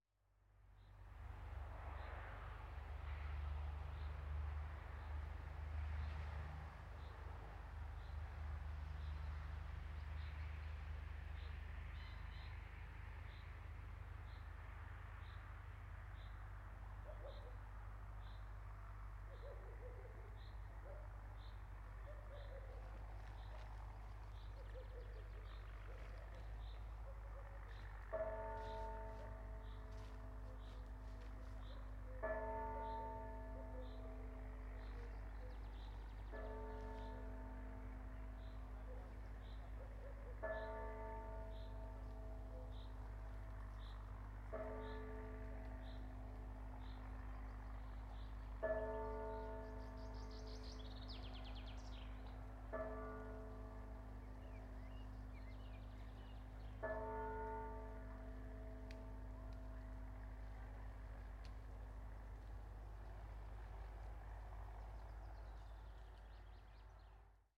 Lazy afternoon 60 km from Warsaw. Tractor, church bells, birds...